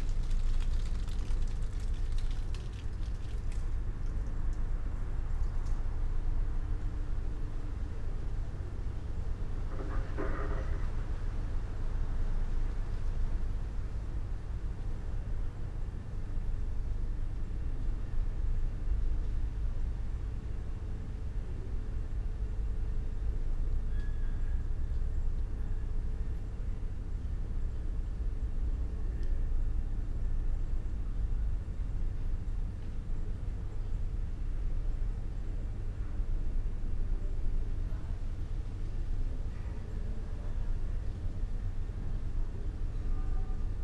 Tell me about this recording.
midnight ambience, stony street ...